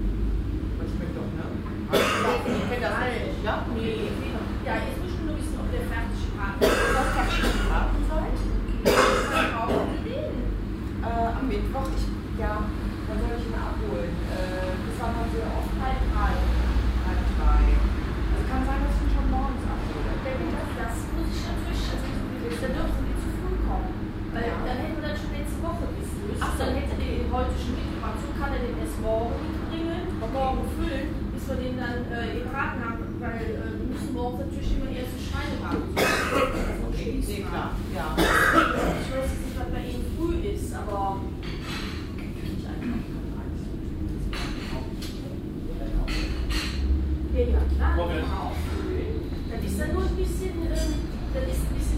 cologne, venloerstr, fleischereifachgeschäft
soundmap: köln/ nrw
brummen von kühlaggregaten, kundengespräch und kundenhusten, morgens
project: social ambiences/ listen to the people - in & outdoor nearfield recordings
9 June 2008